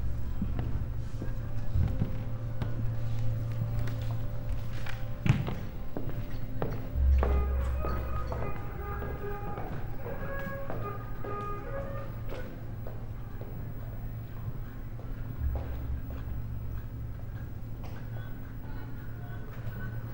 Museen Dahlem, Berlin, Germany - steps hearer
walk, wooden floor and sonic scape at Museen Dahlem, "Probebühne 1", small talks
Deutschland, European Union, May 2013